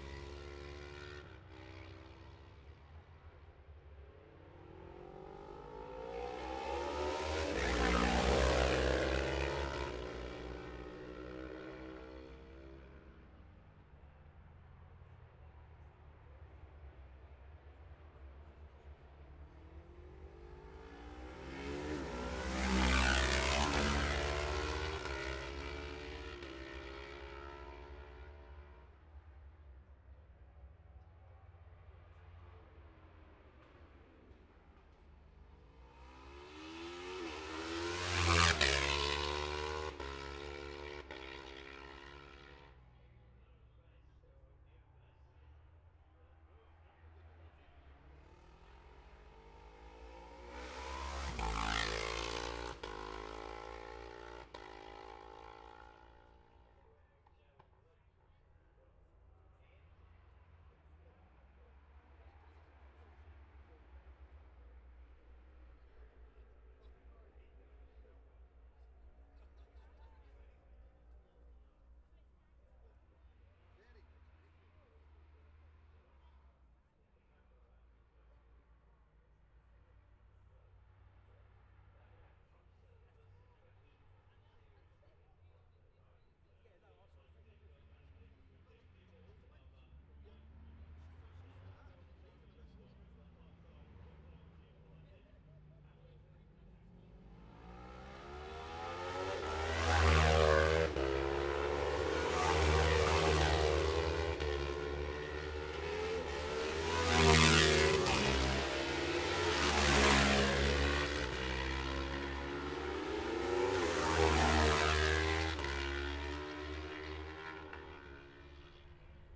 Jacksons Ln, Scarborough, UK - olivers mount road racing ... 2021 ...
bob smith spring cup ... twins group A qualifying ... dpa 4060s to MixPre3 ...
22 May 2021, 12:11